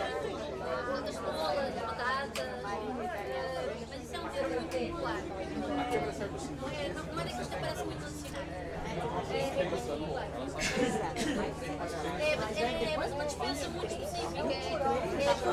Pinhão, Estacao, Portugal - Estacao ferroviaria do Pinaho
Estacao ferroviaria do Pinhao, Portugal. Mapa Sonoro do rio Douro. Pinhao railway station. Douro, Portugal. Douro River Sound Map
20 July, 11:30